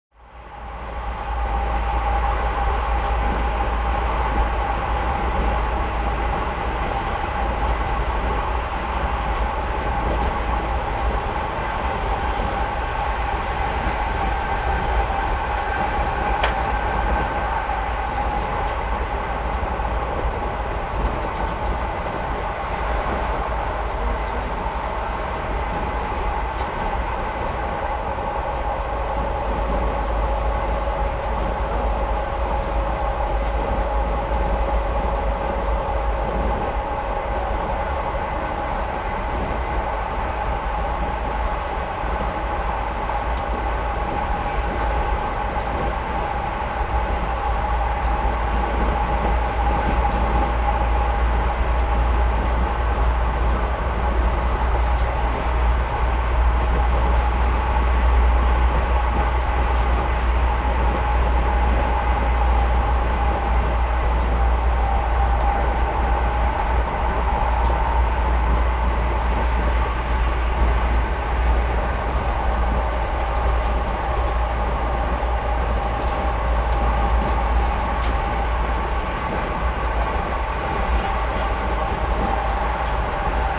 Ingemundebo, Emmaboda, Sverige - Train interieur with open window.
Train interieur with open window. Zoom H6. Øivind Weingaarde.